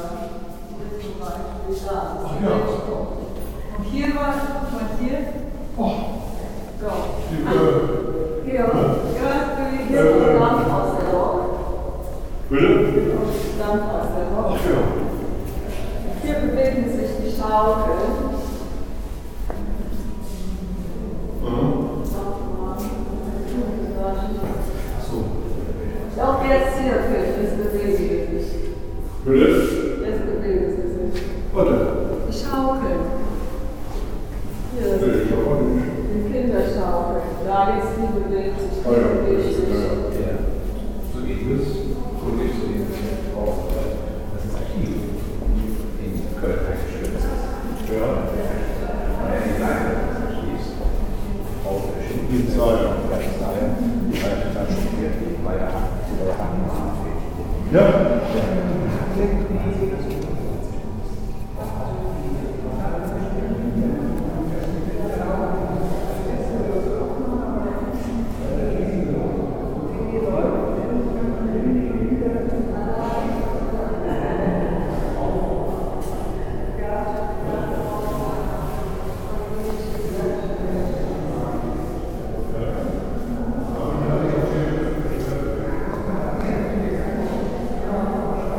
museum castle moyland, exhibition hall
Inside an exhibition hall of the museum moyland - here presenting young contemporary female artists. The sound of visitor conversations and a video installation in the reverbing hall.
soundmap d - topographic field recordings, art places and social ambiences
Bedburg-Hau, Germany